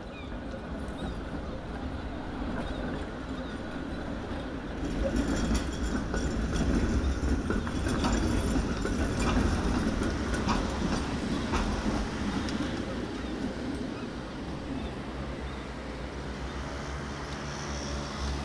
Buitenhof, Den Haag, Nederland - Het Buitenhof, The Hague
General atmosphere, traffic, seagulls and pedestrians on Het Buitenhof in The Hague. Recorded March 4th 2014. Recorded with a Zoom H2 with additional Sound Professionals SP-TFB-2 binaural microphones.
The Hague, The Netherlands, 4 March 2014, ~16:00